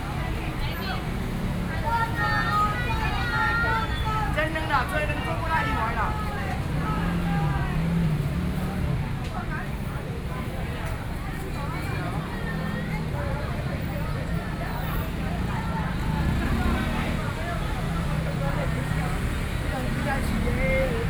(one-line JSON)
{"title": "Zhonghua St., Luzhou Dist. - Traditional Market", "date": "2013-10-22 17:18:00", "description": "walking in the Traditional Market, Binaural recordings, Sony PCM D50 + Soundman OKM II", "latitude": "25.08", "longitude": "121.46", "altitude": "8", "timezone": "Asia/Taipei"}